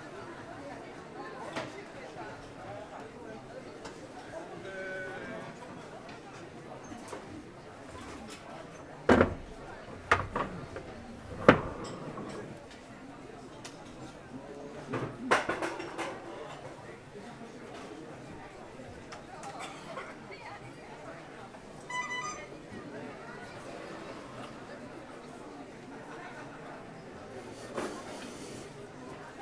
Havremarken, Farum, Denmark - Indoor flea market
Indoor flea market at rush hour. Constant voice background noise. Close tapping sounds from people searching
Brocante en intérieur, très frequentée. Sons de voix continue. Bruit de personnes fouillant à proximité